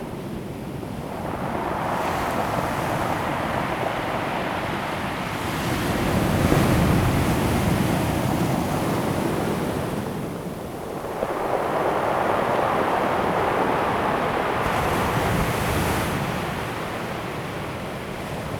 At the seaside, Sound of the waves, Very hot weather
Zoom H2n MS+ XY
5 September 2014, 14:47